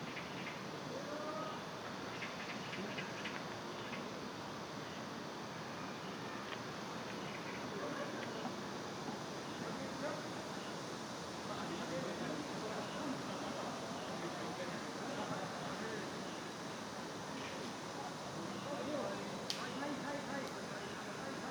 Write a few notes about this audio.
Yangjae Citizens Forest, Tennis Court, Magpie, Cicada, 양재시민의숲, 테니스치는 사람들, 까치, 매미